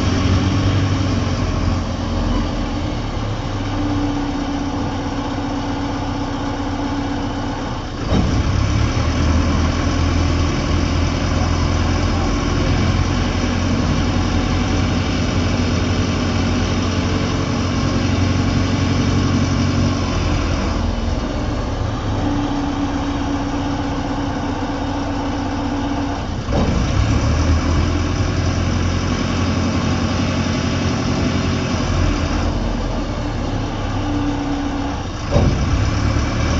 Ferry captains are proud and sad. They
e seamen, but they never set off to new lands. Approximately 2 minutes and 30 seconds from one bank to the other are yet a trip worth taking.
20 July 2010, 15:55